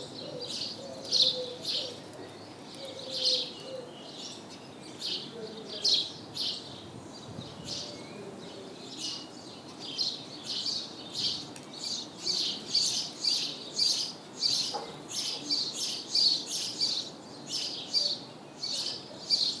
In the small center of Sainte-Marie-de-Ré, sparrows are singing and trying to seduce. The street is completely overwhelmed by their presence.
Sainte-Marie-de-Ré, France